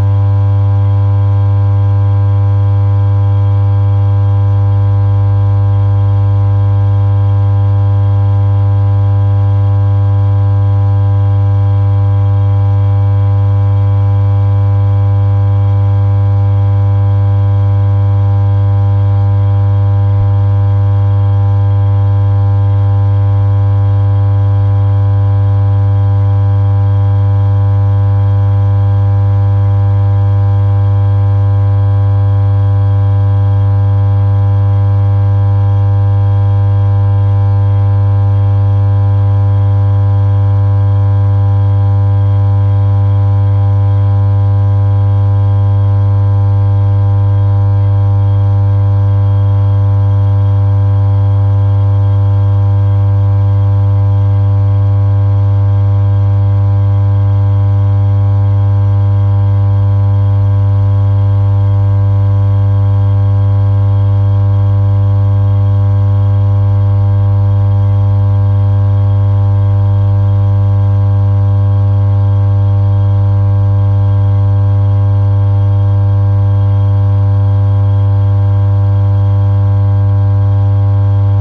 Varžupio g., Akademija, Lithuania - Electrical substation hum
Dual contact microphone recording of electrical substation hum, captured through metal beams.